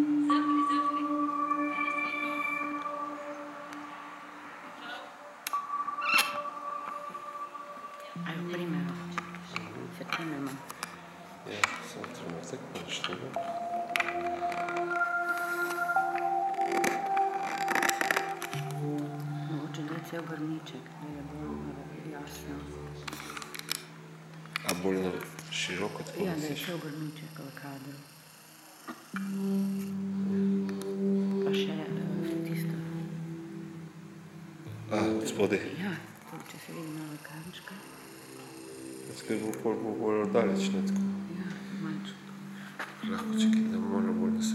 Tisto sončno popoldne sem s prijateljico v centru Nove Gorice snemal sejo Bioloških Ritmov in Signalov Goriških Vrtnic. Ritmi in frekvence so se skozi čas spreminjale, kar nama je lepo dalo vedet v kakšnem vzdušju so takrat bile vrtnice. Posnetek v živo je dolg priblizno eno urco, uraden posnetek (brez zvokov okolice, zivali, ljudi in avtomobilov) bo naknadno tudi še objavljen v boljši studijski zvočni kvaliteti.
Več informacij o poteku snemanja in strukturah Bioloških Ritmov Vrtnic iz tistega popoldneva bodo še naknadno objavljene tudi na moji spletni strani..
Slovenija, 4 August, ~17:00